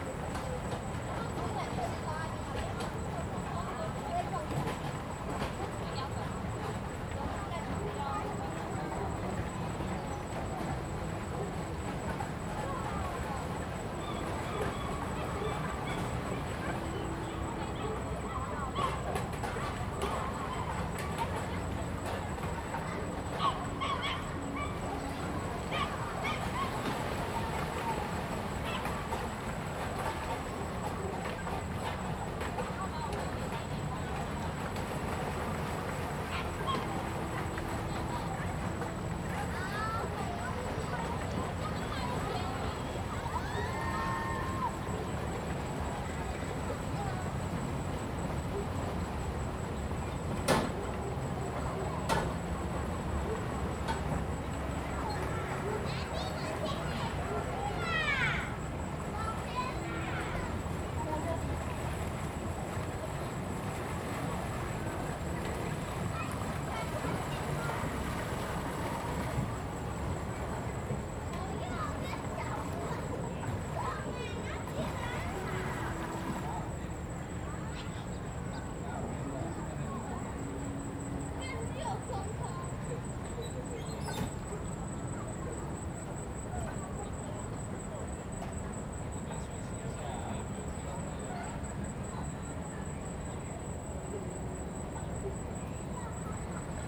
Bitan, Xindian Dist., New Taipei City - At the lake
Many tourists stepping on the boat on the lake
Zoom H2n MS+ XY
1 August 2015, ~16:00